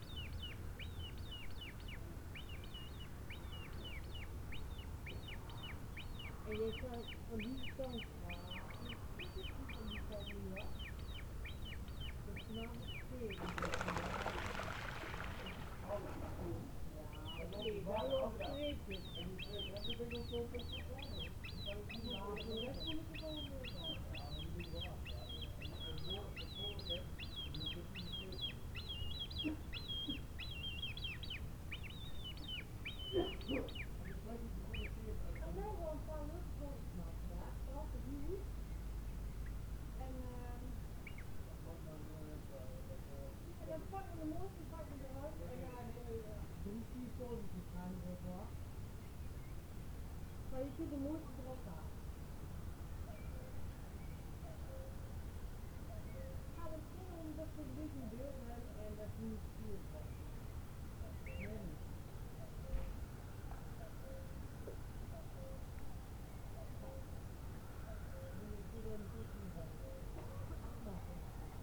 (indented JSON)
{
  "title": "workum, het zool: marina, berth h - the city, the country & me: marina",
  "date": "2011-06-26 22:36:00",
  "description": "young coot and cuckoo in the distance\nthe city, the country & me: june 26, 2011",
  "latitude": "52.97",
  "longitude": "5.42",
  "altitude": "1",
  "timezone": "Europe/Amsterdam"
}